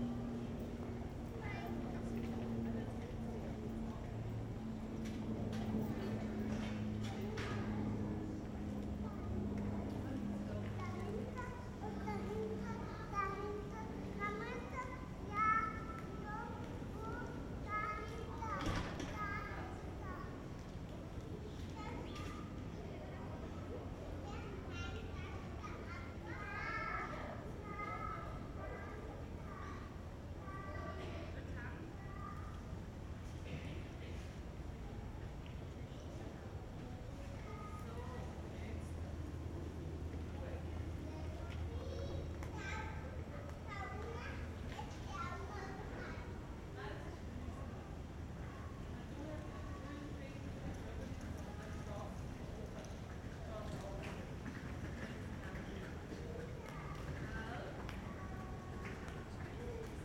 Zürich, St. Peterhofstatt, Schweiz - Kleiner Stadtplatz
Spielende Kinder, Handwerker, Linienflugzeug, Passanten, Kinderwagen über Pflastersteine, Glockenschlag 17:00 Uhr von Kirche St Peter und Fraumünster, kl. Flugzeug, Rollkoffer über Pflastersteine.
Zürich, Switzerland, 6 September